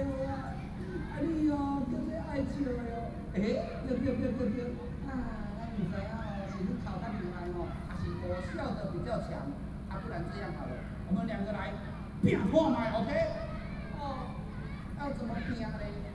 新興公園, Taipei City, Taiwan - Glove puppetry
3 November 2012, ~20:00